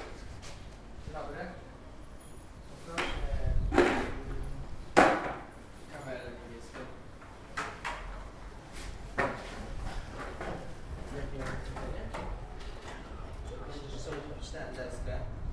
Rynek Kosciuszki, Bialystok, Poland - skaters